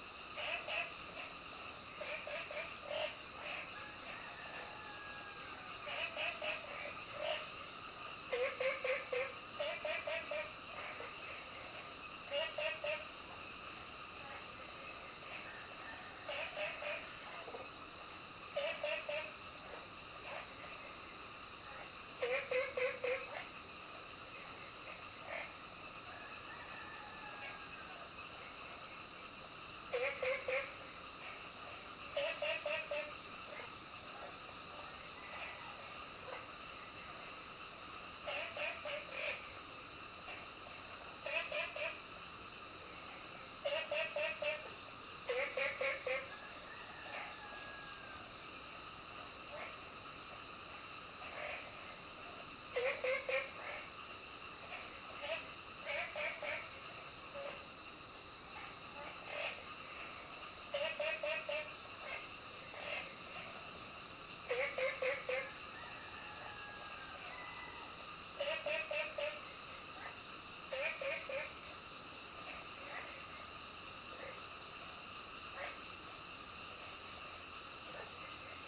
綠屋民宿, 桃米里 Nantou County - Early morning
Crowing sounds, Bird calls, Frogs chirping, Early morning
Zoom H2n MS+XY